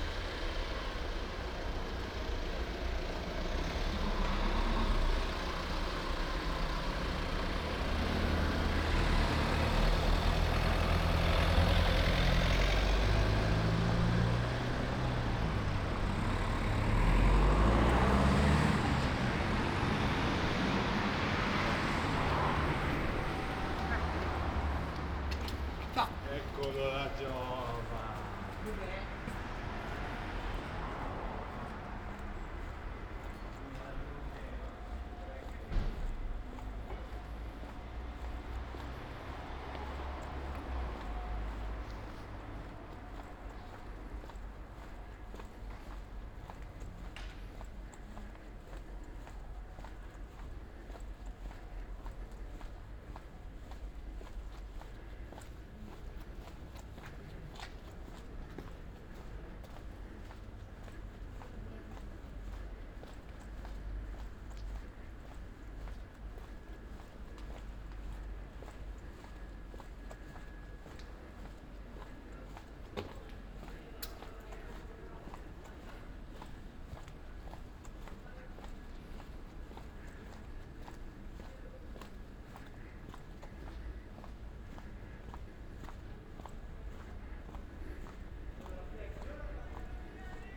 Ascolto il tuo cuore, città. I listen to your heart, city. Chapter LXXXV - Night walk round 11 p.m. but Bibe Ron is closed in the days of COVID19 Soundwalk
"Night walk round 11 p.m. but Bibe Ron is closed in the days of COVID19" Soundwalk"
Chapter CLXXIII of Ascolto il tuo cuore, città. I listen to your heart, city
Wednesday, May 19th, 2021. The first night of new disposition for curfew at 11 p.m. in the movida district of San Salvario, Turin. Walk is the same as about one year ago (go to n.85-Night walk et Bibe Ron) but this night Bibe Ron is closed. About one year and two months after emergency disposition due to the epidemic of COVID19.
Start at 10:25 p.m. end at 11:02 p.m. duration of recording 37’09”
As binaural recording is suggested headphones listening.
The entire path is associated with a synchronized GPS track recorded in the (kmz, kml, gpx) files downloadable here:
similar to 85-Night walk et Bibe Ron